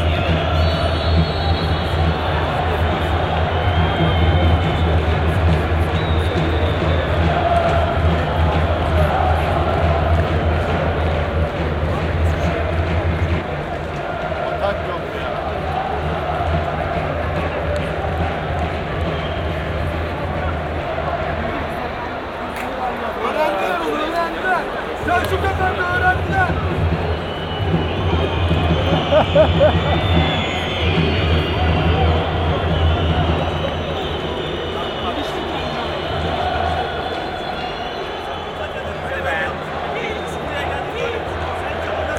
Seyrantepe a great Saturday evening I came to the Turk Telekom Arena Stadium. Galatasaray – Gençlerbirliği match I started to save during the ambiance on the inside. This is really a very noisy place. To lower than -20 decibels during registration had preamfi. It was extremely large and splendid interior acoustics.
P. S. Galatasaray defeated the first half while the second half 0-2. 3-2 in the state to have brought. Listen to recordings that were recorded in the moments where the score to 2-2.